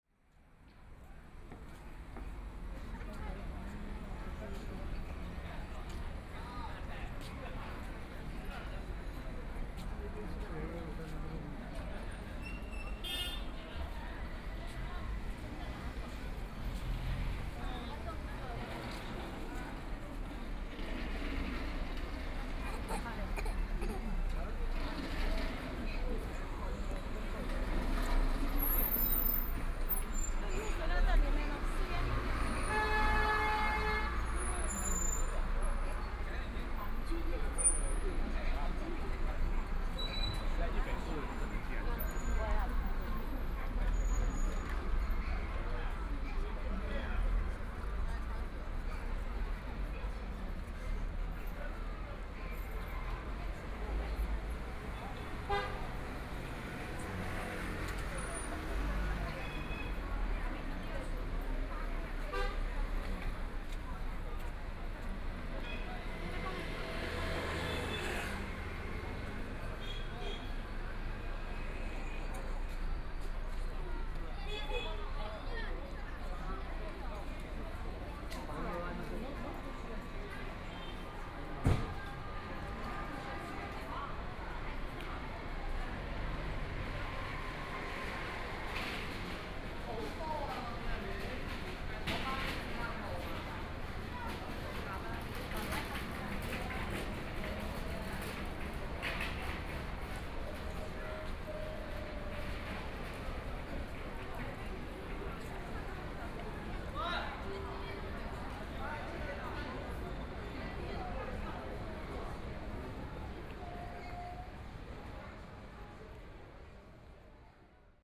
Shanghai, China - walking in the Street
walking in the Street, Binaural recording, Zoom H6+ Soundman OKM II